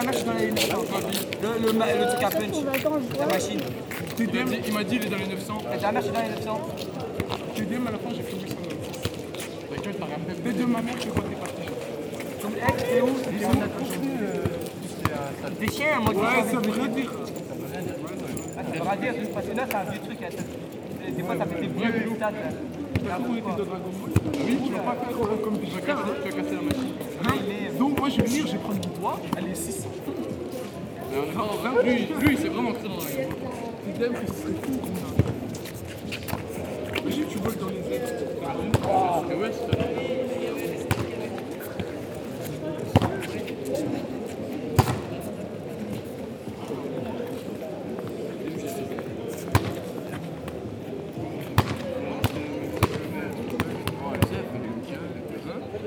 Ottignies-Louvain-la-Neuve, Belgium - Louvain-La-Neuve, a welcoming city
Sound of my city. In first stationary on the 3 first minutes, young people playing football. After, this is a walk into the city. You can hear all the bars, the restaurants, and simply people drinking beers or juices into the streets. Also young people cheating, a baby and a few tourists walking... This is a welcoming city. It's a quiet business day and everybody is easygoing.
8 August 2018, 20:15